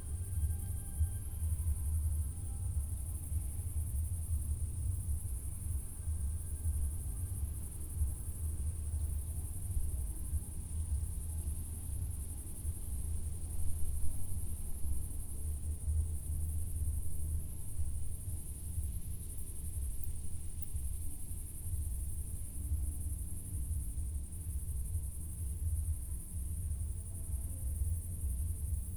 midnight ambience in a forest settlement near Bestensee, a cricket and music from a distant party
(Sony PCM D50, Primo EM172)